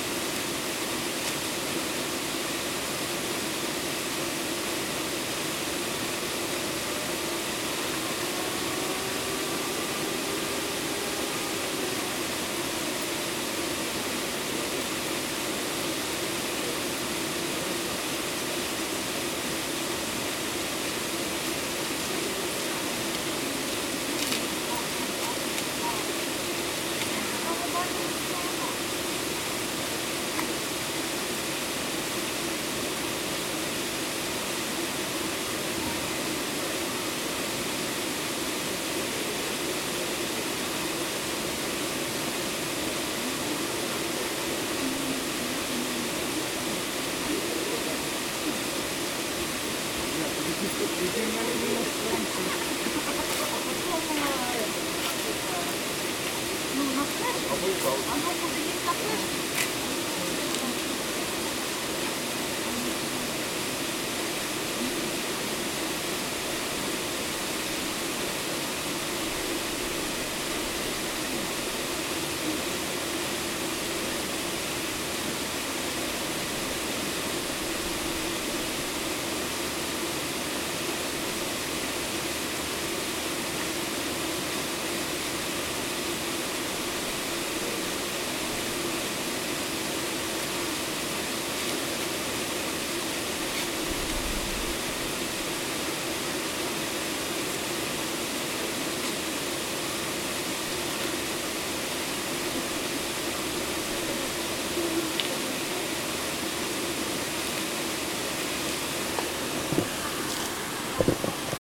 Ukraine / Vinnytsia / project Alley 12,7 / sound #19 / Sabarivska HPP